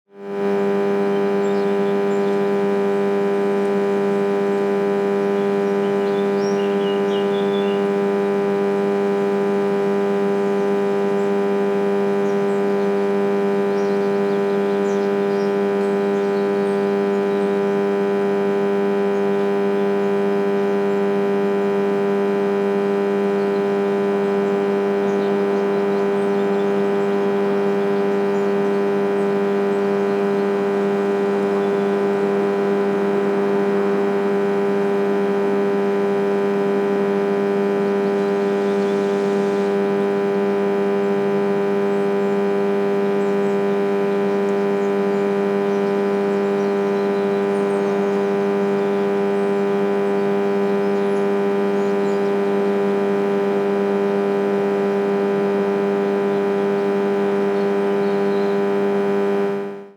`Massive electical transformer